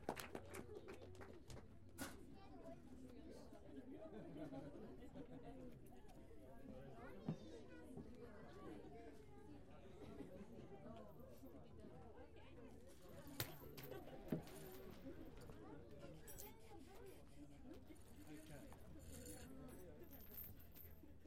Timegun at the Roundhouse, Fremantle
Timegun sounding at the Roundhouse